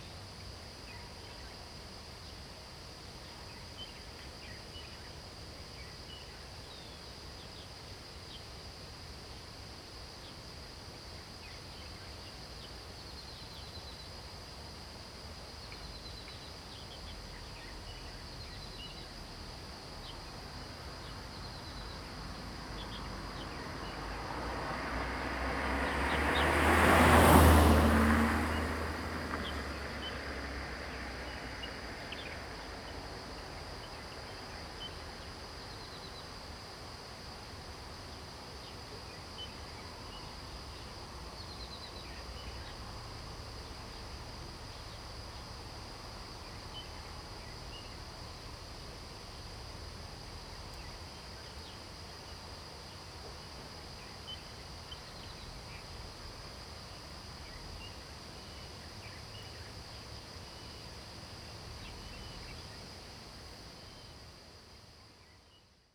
Taomi Ln., Puli Township, Taiwan - Birds singing
Early morning, Bird calls
Zoom H2n MS+XY